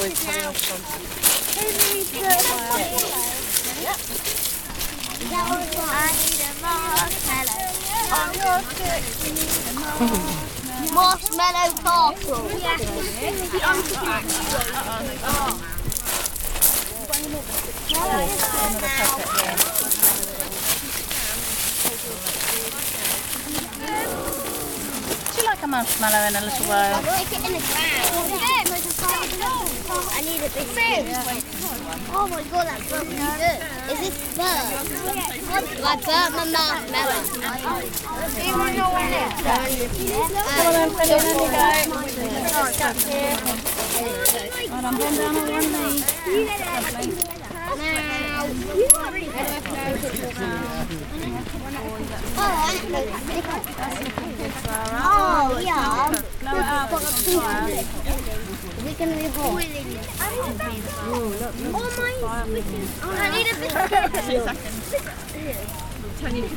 {"title": "Ringstead Bay, Dorchester, Dorset - S'mores by the fire", "date": "2015-07-21 15:35:00", "description": "Children at Dorset Beach School learn how to be careful around a fire pit and how to cook marshmallows over a fire at the beach. The marshmallows are then squished in between two biscuits like a S'more, a traditional evening campfire treat!\nDorset Beach School is part of Dorset Forest School.\nSounds in Nature workshop run by Gabrielle Fry. Recorded using an H4N Zoom recorder.", "latitude": "50.63", "longitude": "-2.36", "timezone": "Europe/London"}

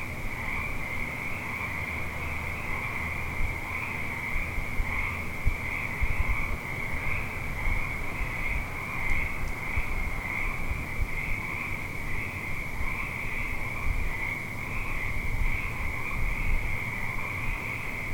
{"title": "Wind & Tide Playground - Frogs", "date": "2020-04-11 23:39:00", "description": "I discovered a couple nights ago that a frog chorus starts up around midnight each night, somewhere in the swampy overgrowth across the street — and mysteriously pauses now and then. It’s impossible to tell exactly where it originates, so the cover photo was taken in the general vicinity, in the daylight.\nI would've maybe never discovered this were it not for COVID-19, which closed down my health club, which means I've been occasionally staying up late instead of swimming first thing in the morning. Who knows how long this has been going on?\nMajor Elements:\n* Intermittent frog chorus\n* Distant cars and motorcycles\n* Airplanes\n* Distant train\n* One close car driving past, stopping, and turning around\n* Rare midnight birds", "latitude": "47.88", "longitude": "-122.32", "altitude": "120", "timezone": "America/Los_Angeles"}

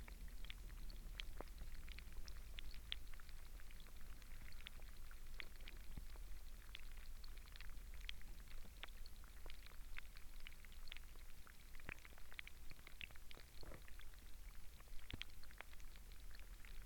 {"title": "Nationale Park Hoge Veluwe, Netherlands - Deelensewas underwater", "date": "2020-05-26 14:14:00", "description": "2 Hydrophones. water stuff (?) and helicopter.", "latitude": "52.09", "longitude": "5.86", "altitude": "46", "timezone": "Europe/Amsterdam"}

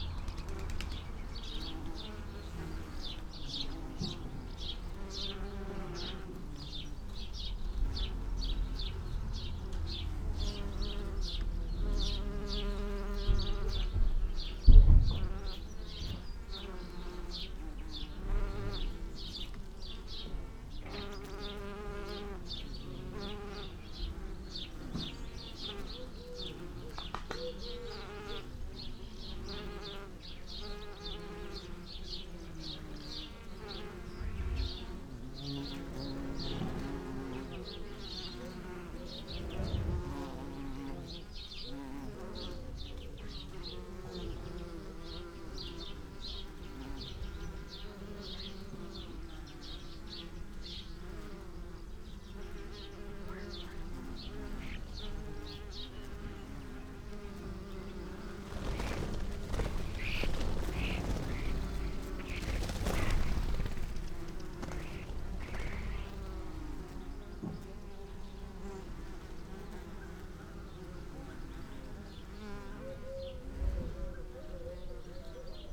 {"title": "Chapel Fields, Helperthorpe, Malton, UK - bees on lavender ...", "date": "2019-07-12 17:30:00", "description": "bees on lavender ... SASS placed between two lavender bushes ... bird calls ... song ... calls ... starling ... house sparrow ... dunnock ... chaffinch ... house martin ... collared dove ... blackbird ... background noise ... traffic ...", "latitude": "54.12", "longitude": "-0.54", "altitude": "77", "timezone": "Europe/London"}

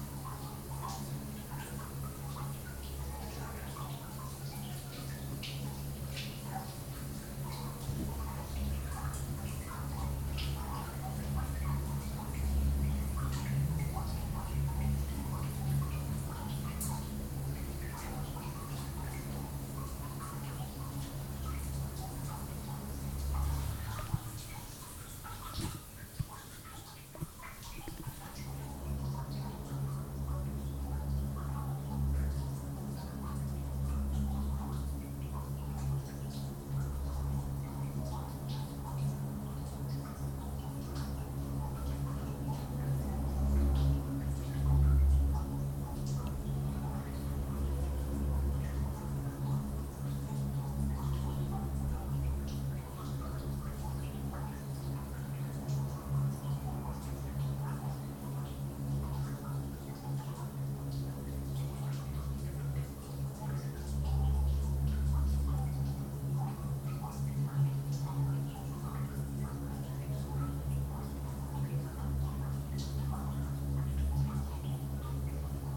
{"title": "Belfast, Belfast, Reino Unido - Singing pipe", "date": "2013-11-20 13:32:00", "description": "At the back of the engineering building at Queen's, an abandoned pipe modulates the dialogue between a leaking hose and the street.\nZoom H2n in XY setup", "latitude": "54.58", "longitude": "-5.94", "altitude": "23", "timezone": "Europe/London"}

